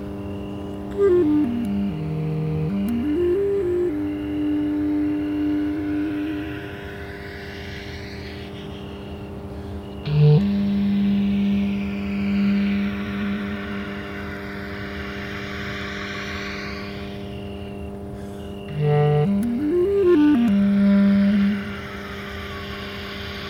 Maribor, Slovenia - Free clarinet jamming with cricket and transformer
No processing, just raw sounds from abused clarinet along with a cricket and electric transformer station and some traffic.